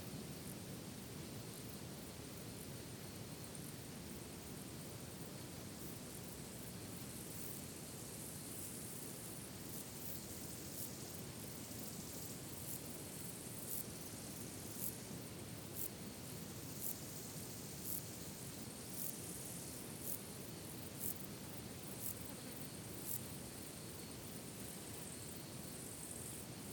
Sigale, Frankreich - Boulevard du Cross, Alpes-Maritimes - Life in a meadow, some cars passing by
Boulevard du Cross, Alpes-Maritimes - Life in a meadow, some cars passing by.
[Hi-MD-recorder Sony MZ-NH900, Beyerdynamic MCE 82]